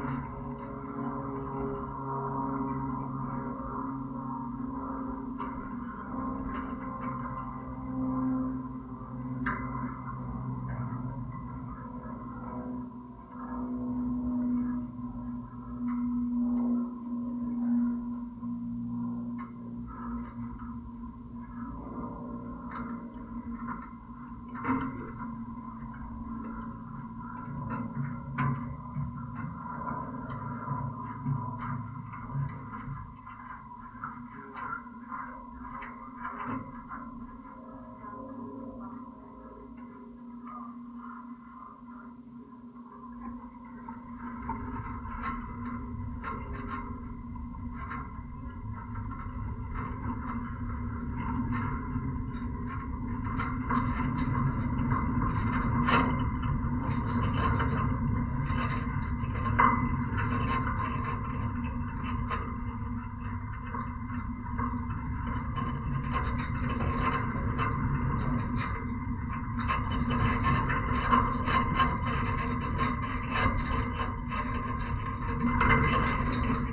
Klondike Park Overlook, Augusta, Missouri, USA - Klondike Park Overlook Plane
Sounds of voices and a plane overhead from contact mics attached to wire running between the rails of a wood fence on top of a bluff overlooking the Missouri River and Labadie Energy Center in Klondike Park. Klondike Park is at the site of the former Klondike Sandstone Quarry, which was founded in 1898 and closed in 1983. Sandstone was crushed on site and then shipped by railroad to be used in the manufacture of glass. The Lewis and Clark Expedition passed by here in 1804. Labadie Energy Center is a coal-fired power plant that emits 15,508,284 metric tons of carbon dioxide a year and a constant drone that can be heard in the park 1.3 miles away from the plant.